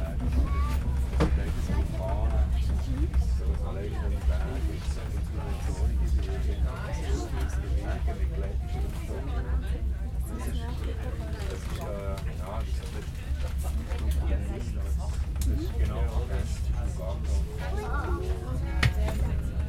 Zug vor Bern, Schnellzug in die Alpenkantone
Intercity nach Bern und in die Berneroberländer-Städte, Weiterfahrt nach Spiez geplant